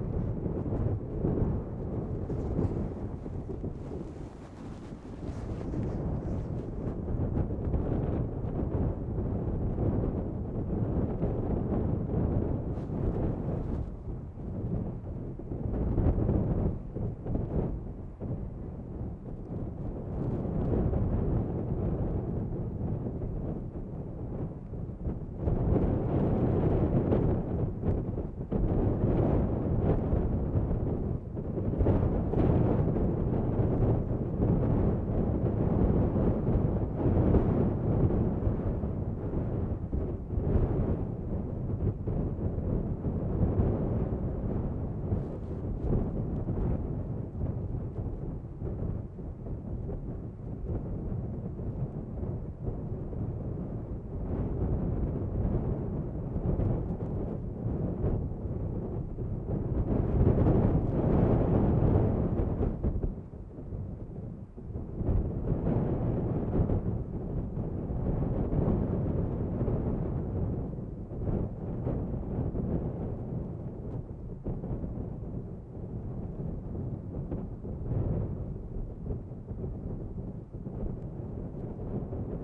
Bahia Inutil, Magallanes y la Antártica Chilena, Chile - storm log - erratic boulder train useless bay
erratic boulder field at useless bay, wind 48 km/h, ZOOM F1, XYH-6 cap under hood
Inútil Bay (Spanish: Bahía Inútil) or Useless Bay - The bay was thus named in 1827 by Captain Phillip Parker King, because it afforded "neither anchorage nor shelter, nor any other advantage for the navigator"
Erratic boulder trains (EBTs) are glacial geomorphological results and reveal former ice flow trajectories.